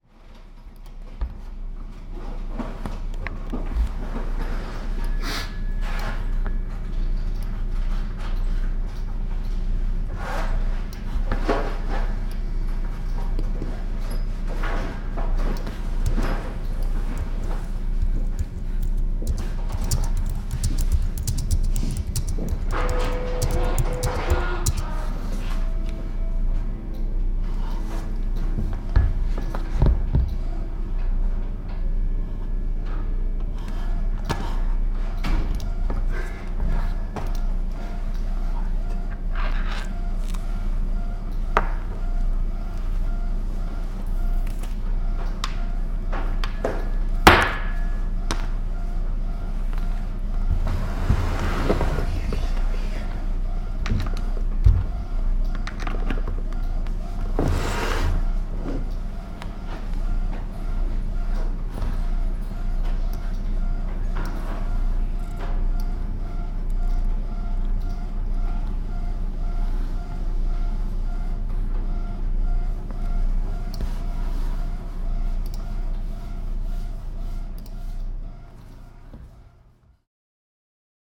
I noticed a room in the Arts Center where many different mediums were being accomplished- from paint, to computer work, to photography printing and readers flipping pages- so I recorded the individuals tasks from the middle of the room and resulted with an interesting collaboration of working sounds.
Allentown, PA, USA, 9 December, 12:26